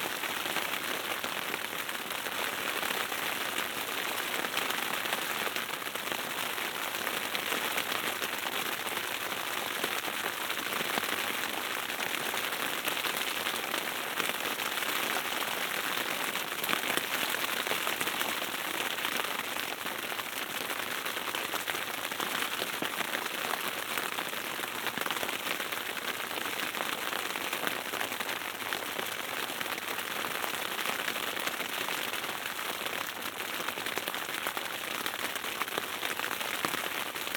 The rain knocks on the roof of the tent, White Sea, Russia - The rain knocks on the roof of the tent
The rain knocks on the roof of the tent.
Стук дождя по крыше палатки.
Mayda, Arkhangelsk Oblast, Russia